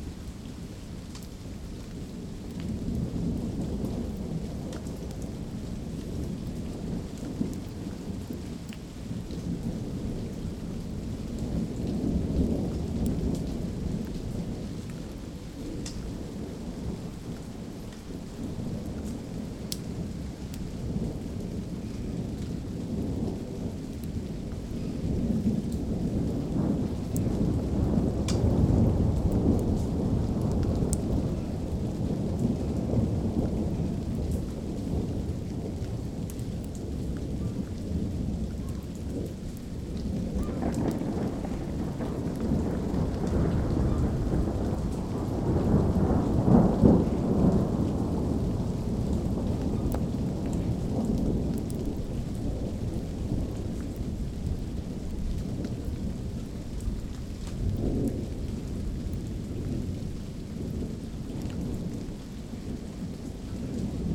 Copernicuslaan, Den Haag, Nederland - Thunder and rain
Typical summer thunder and rain.
(recorded with internal mics of a Zoom H2)
10 June, ~15:00